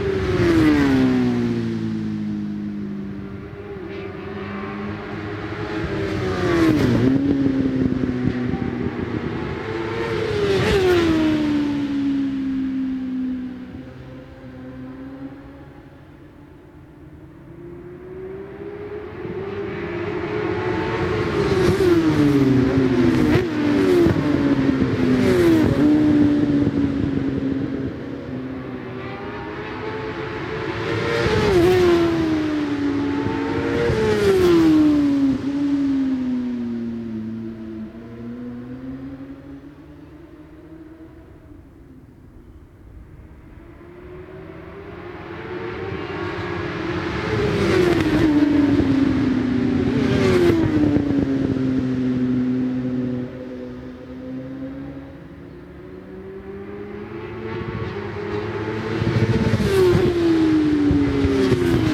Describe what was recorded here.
british superbikes 2002 ... superstock second qualifying ... one point stereo mic to minidisk ...